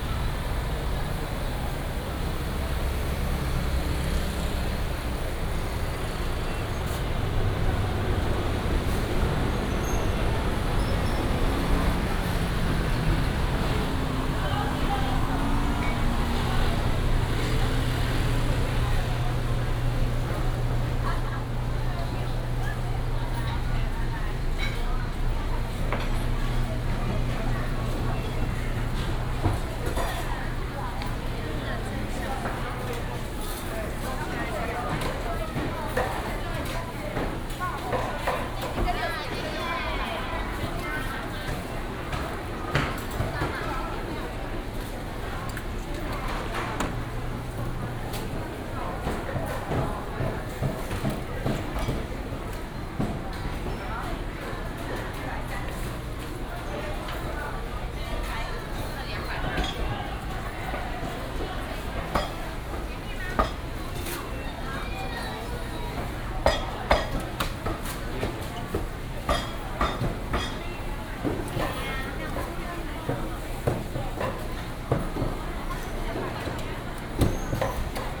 Nanping Rd., Taoyuan Dist., Taoyuan City - Walking in the traditional market
Traffic sound, Walking in the traditional market
17 November 2016, ~16:00